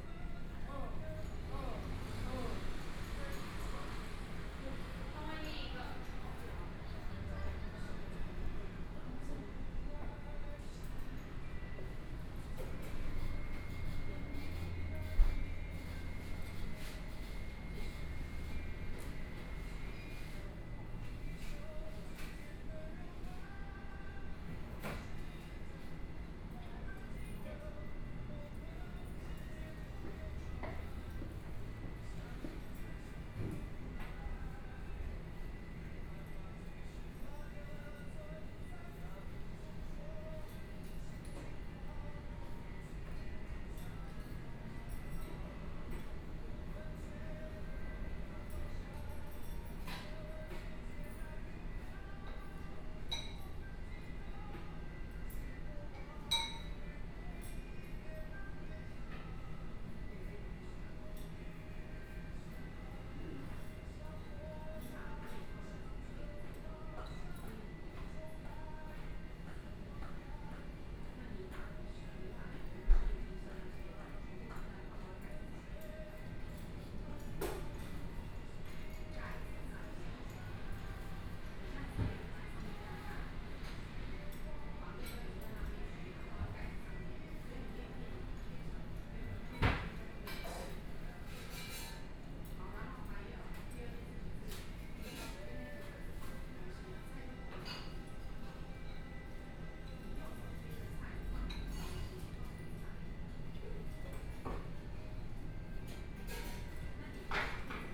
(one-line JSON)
{"title": "三商巧福民權店, Zhongshan Dist., Taipei City - In the restaurant", "date": "2014-02-10 15:06:00", "description": "In the restaurant, Binaural recordings, Zoom H4n+ Soundman OKM II", "latitude": "25.06", "longitude": "121.52", "timezone": "Asia/Taipei"}